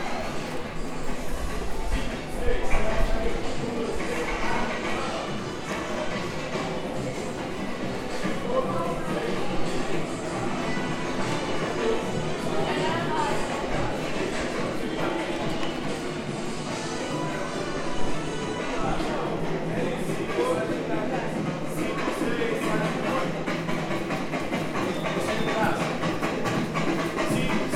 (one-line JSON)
{"title": "Rua Vergueiro - Paraíso, São Paulo - SP, 01504-001, Brasil - Centro Cultural São Paulo (CCSP)", "date": "2019-04-12 15:02:00", "description": "Paisagem Sonora do Centro Cultural São Paulo, gravado por estudantes de Rádio, TV e Internet\nSexta Feira, 12/04/2019", "latitude": "-23.57", "longitude": "-46.64", "altitude": "817", "timezone": "America/Sao_Paulo"}